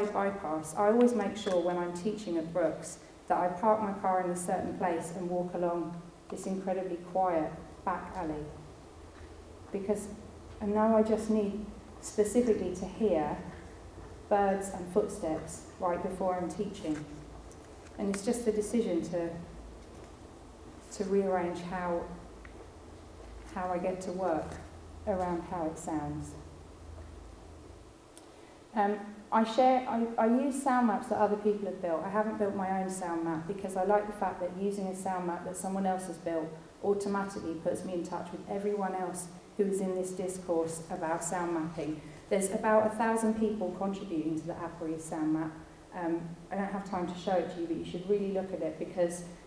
UCL, Garraun, Co. Clare, Ireland - Talking about Roads at the Urban Soundscapes & Critical Citizenship conference, March 2014
You can hear all the banter and talking and setting up at the start, but at about 6 minutes in, there is the joint presentation given by myself and Paul Whitty at the Urban Soundscapes & Critical Citizenship conference, March 2014; we are talking about listening to the street, and how it relates to different sonic practices. Many sounds discussed in the presentation are elsewhere on aporee...